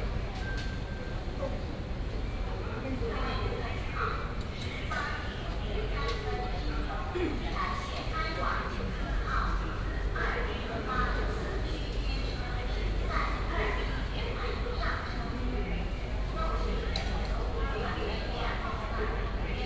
Yilan Station, Taiwan - In the station hall
Station Message Broadcast, In the station hall
18 November 2016, 18:00